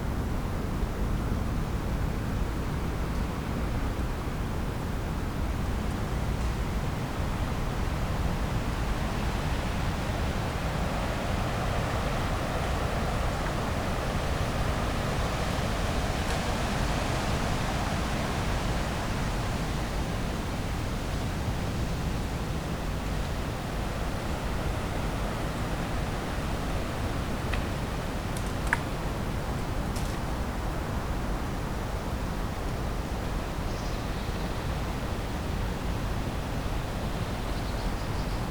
at the grave of christa päffgen (better known as "nico"), looks like a haunted place
stormy afternoon, trees swaying in the wind
the city, the country & me: august 25, 2013